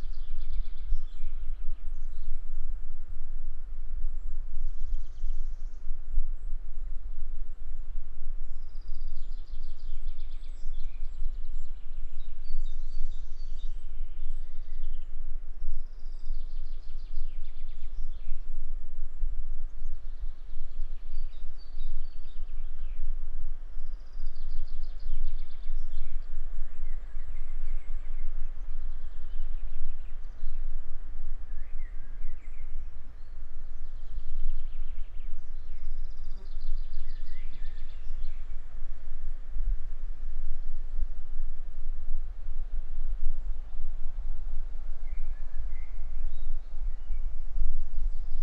Aukštadvaris, Lithuania, in The Devils Pit
Combined recording of omnis and geophone on the ground. The Devil's Pit is the deepest pit in Lithuania: it is funnel-shaped and is about 40 metres deep. The regular circular upper pit diameter is 200 metres. The peat layer at the bottom of the Pit is about 10 metres tall bringing the total depth of the Pit to about 50 metres. The origing is unknown: tectonic or meteoritic.
Trakų rajono savivaldybė, Vilniaus apskritis, Lietuva